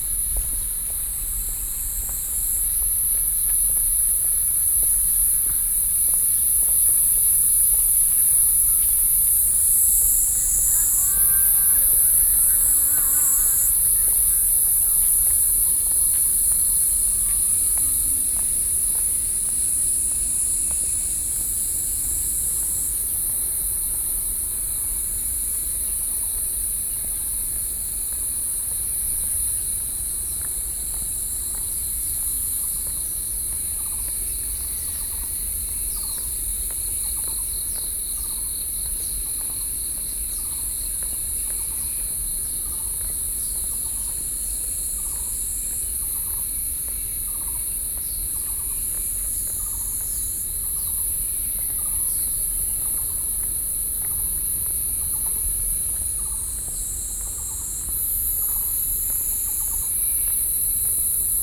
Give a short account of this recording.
Trail, Stream flow of sound, Cicadas, Frogs calling, Sony PCM D50 + Soundman OKM II